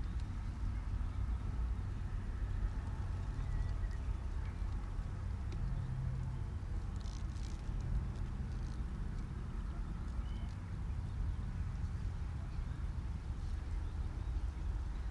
Streaming from a hedgerow in large intensively farmed fields near Halesworth, UK - Early afternoon quiet in the hedge, wind and insects
East of England, England, United Kingdom, 28 May